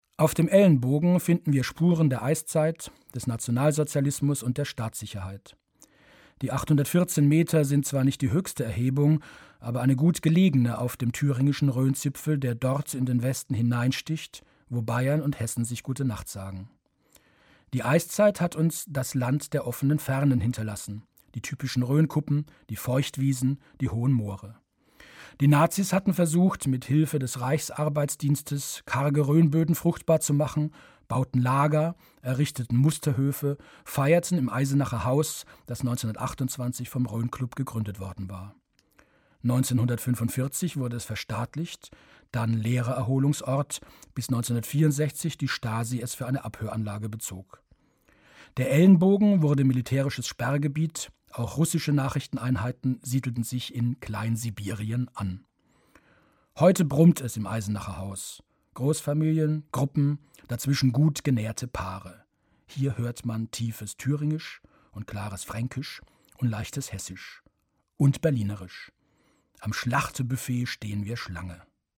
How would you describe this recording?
Produktion: Deutschlandradio Kultur/Norddeutscher Rundfunk 2009